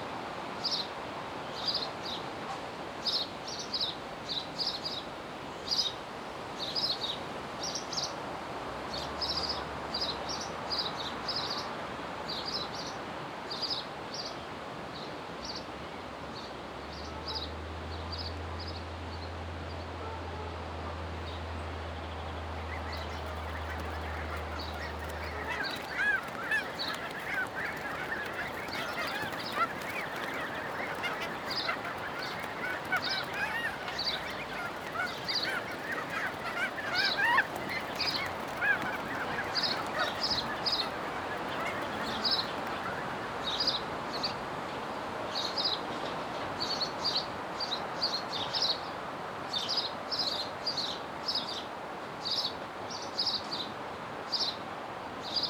Ulflingen, Luxemburg - Nature path Cornelys Millen, bird listenining station
Auf den Naturpfad Cornelys Millen, in einer Holzhütte, die hier für Wanderer eingerichtet wurde, die sich die Zeit nehmen den Vogelstimmen auf und um den nahen Teich zu lauschen.
On the nature path Cornelys Millen, inside a wooden hut, that has been constructed for walkers that take the time here to listen to the birds at and around the nearby pond.
Luxembourg, 6 August 2012